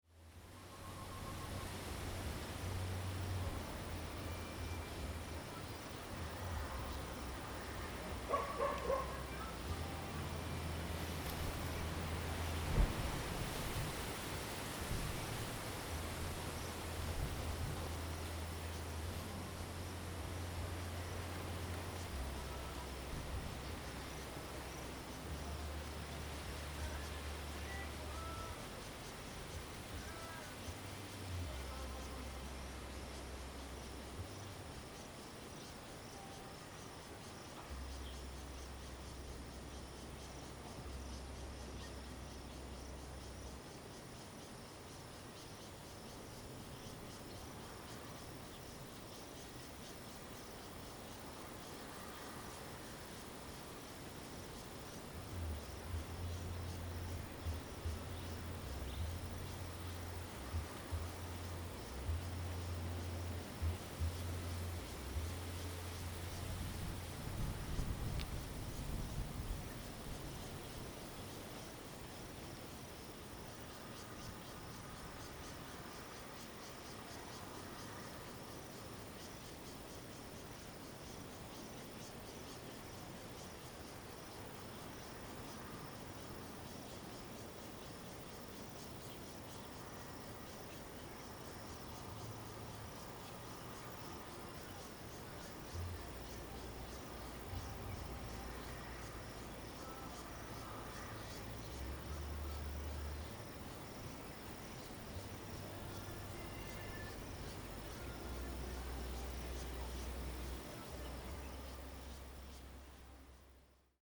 佳崙產業道路, Taimali Township, Taitung County - Mountain trail
Mountain trail, Fruit tree planting area, wind, Before the rain, Bird song, Dog barking
Zoom H2n MS+XY
Taimali Township, Taitung County, Taiwan, 12 April